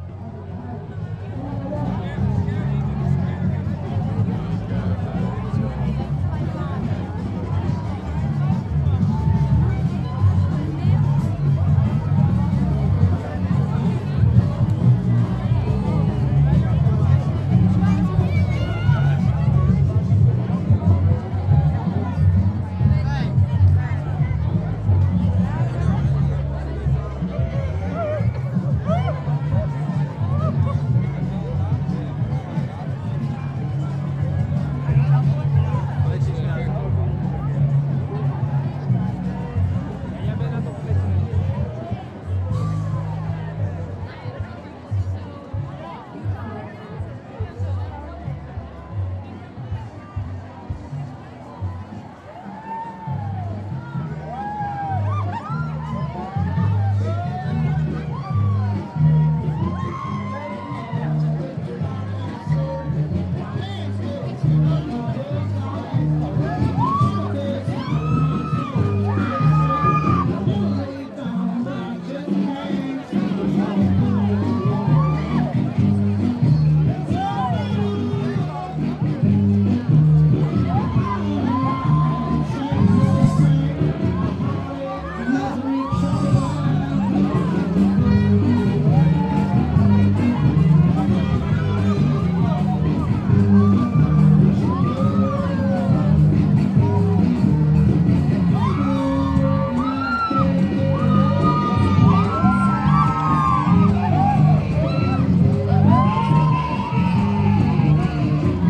Parade - Parade 2010
Impression of the Parade, a anual 10 day theatre festival.
Zoom H2 recorder
12 July, 23:32